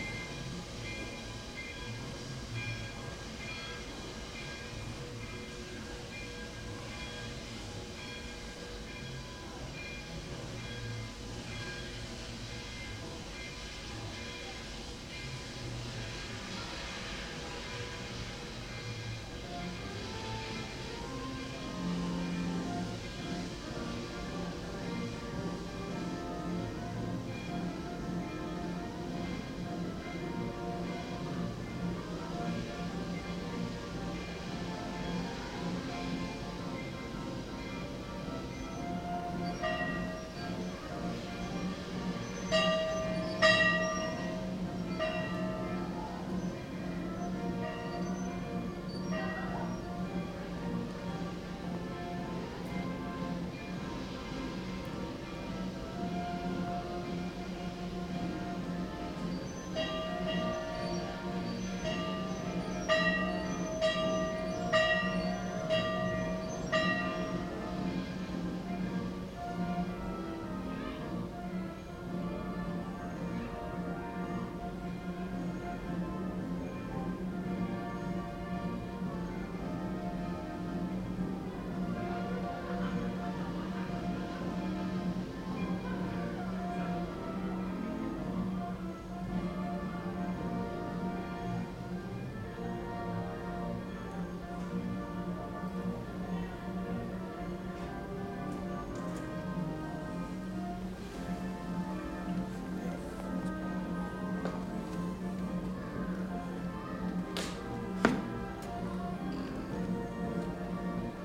Prichard Barn, S Campbell St, Abilene, KS, USA - From Inside the Barn
From the second story of the 1915 Prichard Barn, located on the grounds of the Dickinson County Heritage Center, a number of sounds are heard. Just to the south, the Abilene & Smoky Valley Railroads steam engine (Santa Fe 4-6-2- Pacific #3415) passes by. To the northwest, the Centers 1901 C.W. Parker carousel operates, as a visitor rings the bell near the schoolhouse (northeast). Further to the south, amplified sounds from the Trails, Rails & Tales festival can be heard, followed by footsteps on the wood floor. Stereo mics (Audiotalaia-Primo ECM 172), recorded via Olympus LS-10.